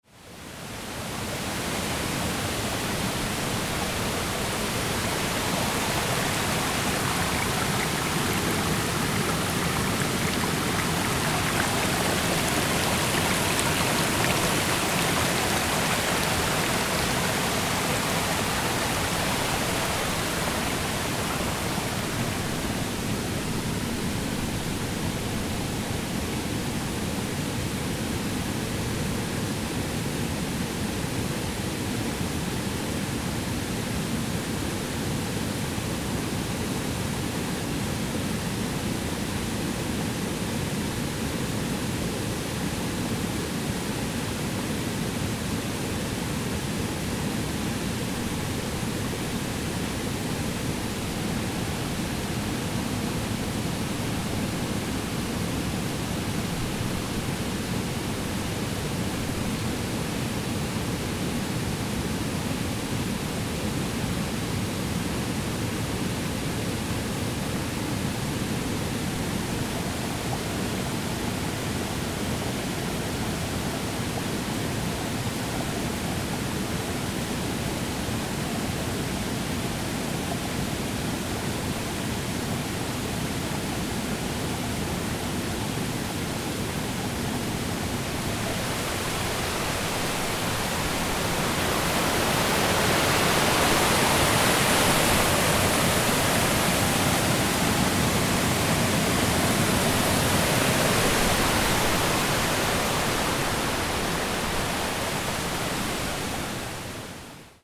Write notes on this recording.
Fluss angeschwollen wegen starkem Regen in Selva